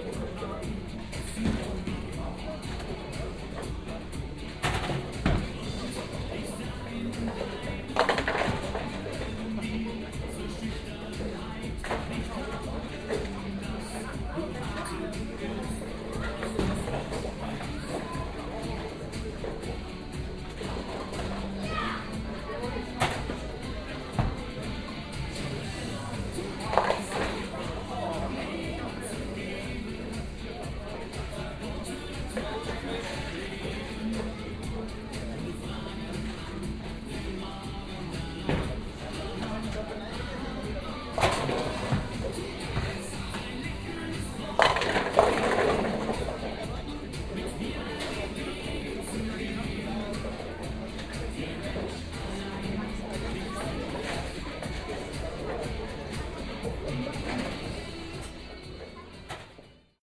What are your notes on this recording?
sa, 14.06.2008, 17:50, bowlingcenter am alex, schlagermusik, kugeln und kegel